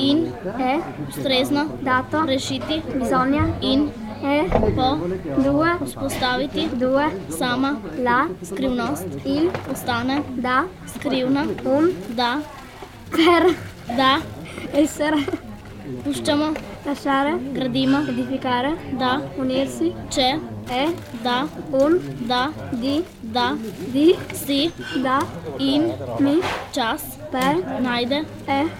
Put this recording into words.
Stazione Topolo 1999, children speaking Slovene and Italian